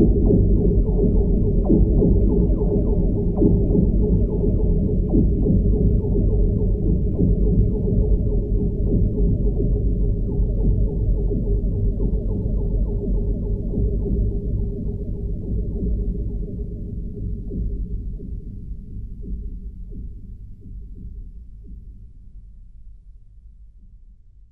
Visé, Belgium - Bridge cable, strange with a contact microphone
A bridge cable recorded with a contact microphone, during a small wind. The cable is so long that when moving, it produces this strange internal sound. Impossible to hear without a contact microphone when it's only a small wind, but last week when wind was strong, I detected the noise from the parking !